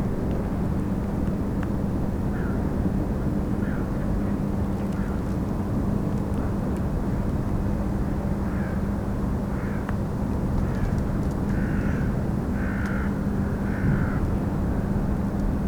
berlin, plänterwald: spree - the city, the country & me: spree river bank
cracking ice of the frozen spree river, towboat maneuvers a coal barge into place, crows, distant sounds from the power station klingenberg
the city, the country & me: january 26, 2014
Berlin, Germany, January 2014